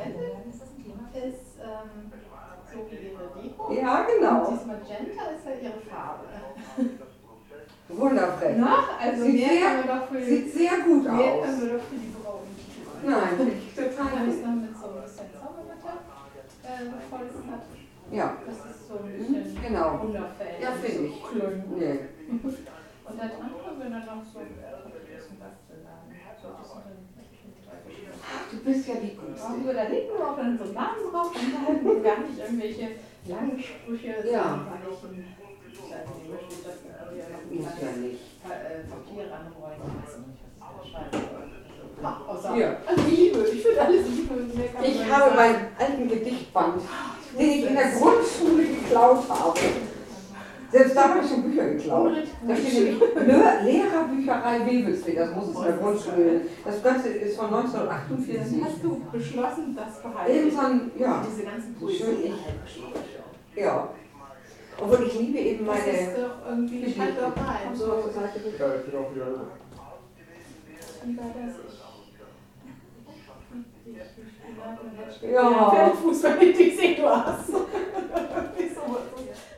Wewelsfleth, Deutschland - ebbe & flut
gaststätte ebbe & flut, deichreihe 28, 25599 wewelsfleth